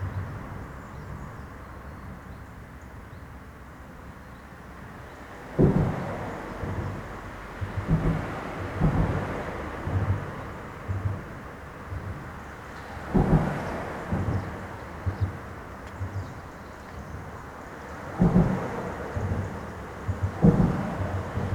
Ponte della Becca (PV), Italy - Under the bridge
The sound of passing cars recorded close to one of the bridge pillars .
Linarolo, Province of Pavia, Italy, 21 October 2012